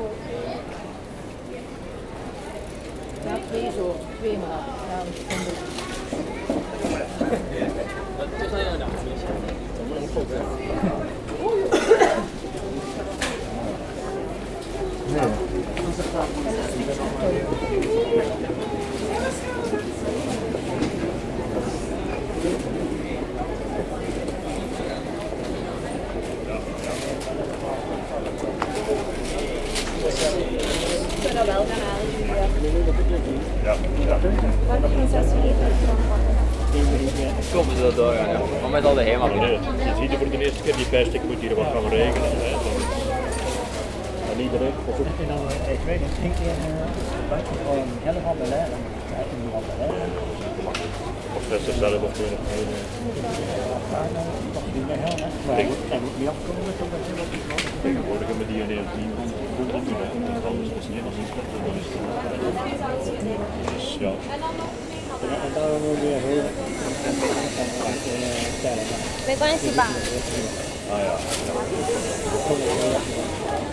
Leuven, Belgique - Cobblestones
Many old streets of Leuven are made with cobblestones. Sound of bags on it, and after, a walk inside the market place.
Leuven, Belgium, 13 October 2018